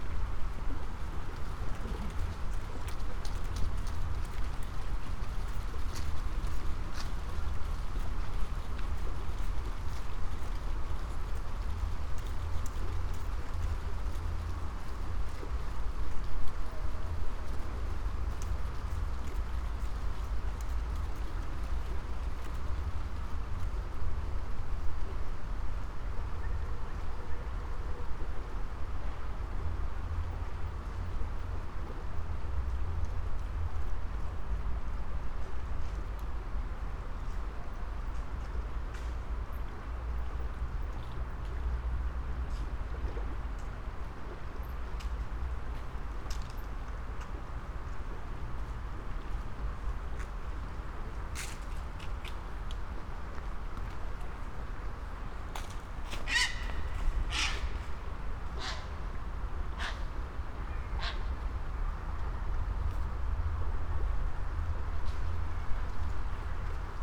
river Savinja, Celje, Slovenia - autumn evening
bats, dry leaves, plane trees, walkers, grey heron, water flow ...